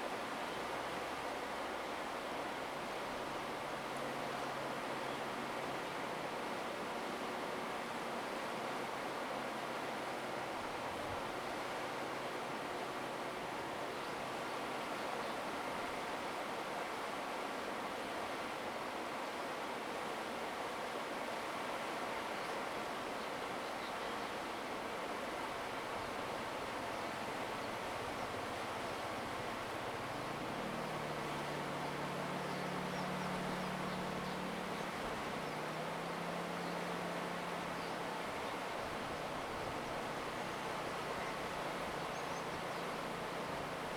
土坂吊橋, Daren Township, Taitung County - Beside the suspension bridge
Beside the suspension bridge, traffic sound, Bird call, Stream sound
Zoom H2n MS+XY